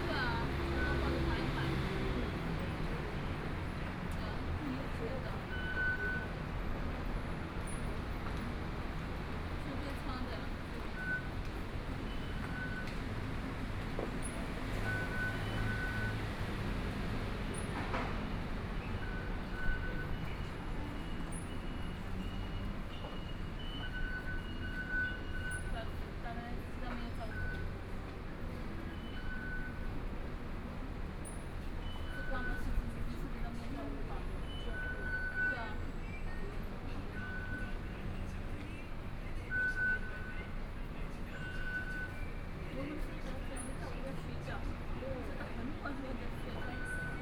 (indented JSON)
{
  "title": "106台灣台北市大安區 - Wenshan Line (Taipei Metro)",
  "date": "2013-10-28 15:46:00",
  "description": "from Technology Building Station to Zhongxiao Fuxing station, Binaural recordings, Sony PCM D50 + Soundman OKM II",
  "latitude": "25.03",
  "longitude": "121.54",
  "altitude": "23",
  "timezone": "Asia/Taipei"
}